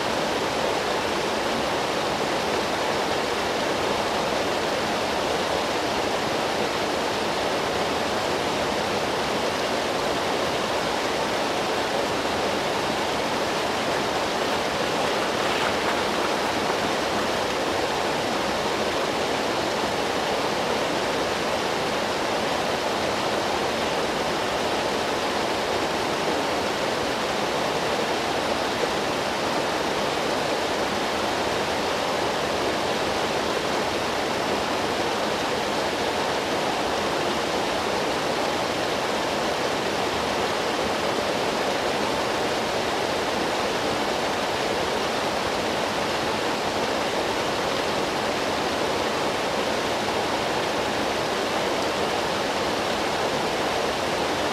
On the bridge over the Neglinka river. You can hear the water rushing. Day. Warm winter.